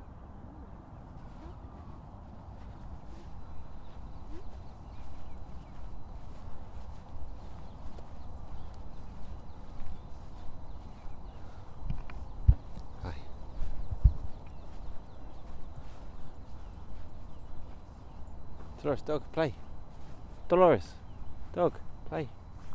London, UK - Frosty morning dog walk
Taking the dog for a walk on a glorious crisp frosty marsh morning. The dog thinks the windjammer is a wild animal!